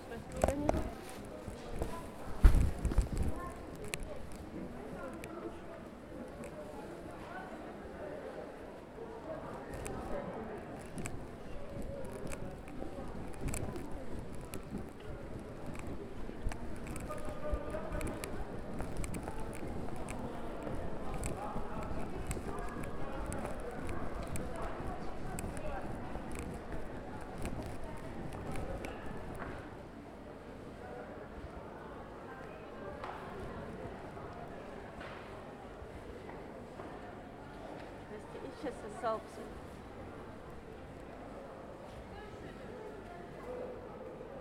Кировоградская ул., влад, Москва, Россия - Buying greens at the market
Buying greens at the market. There are few people there because of the New Year's holidays.
8 January 2022, 16:00